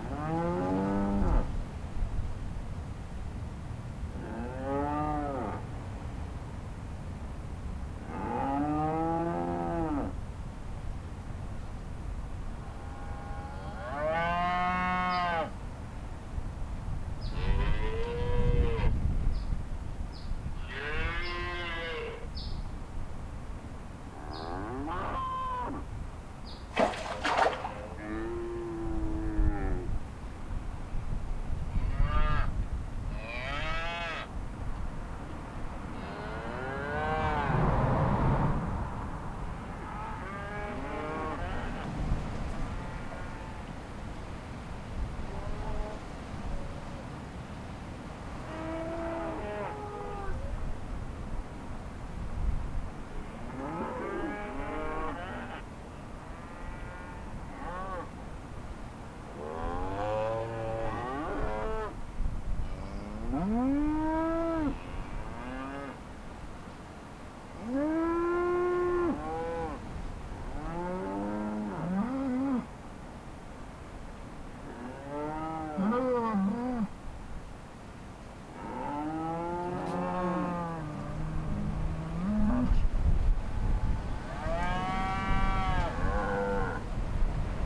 Olancha, CA, USA - Cows Mooing and Ambient Traffic
Metabolic Studio Sonic Division Archives:
Herd of cattle grazing and mooing alongside highway, along with ambient sounds of cars and airplanes. Recorded on Zoom H4N